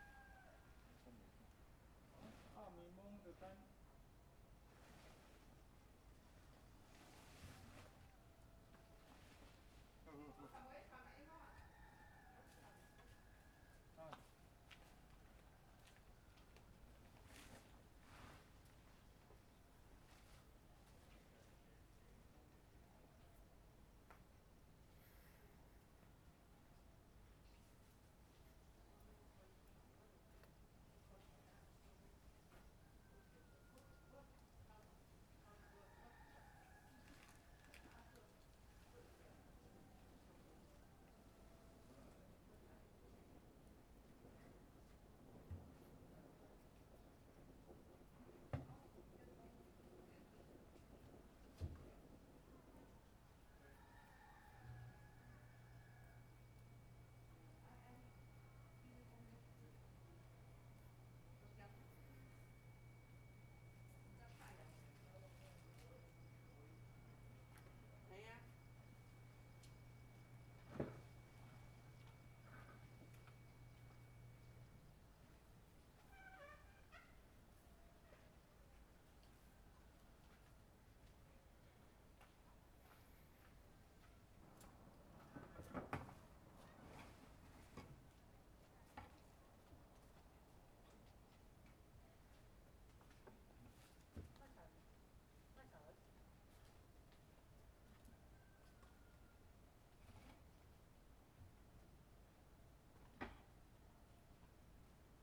On the second floor, Neighbor's voice, Early in the morning, Chicken sounds, Zoom H6 M/S